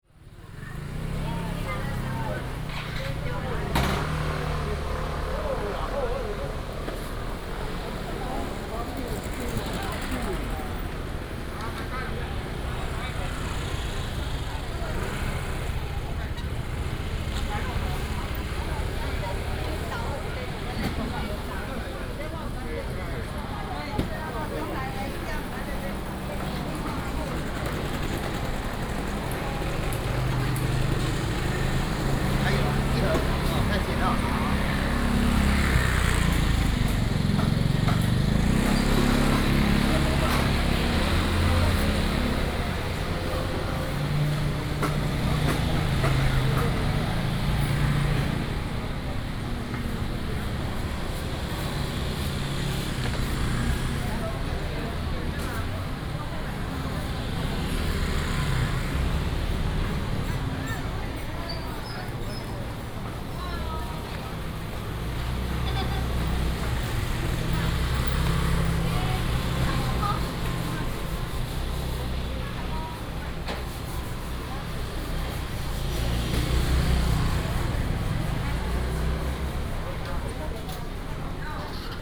Guofu 6th St., Hualien City - Walking in the traditional market
Walking in the traditional market, traffic sound
Binaural recordings
14 December, 17:21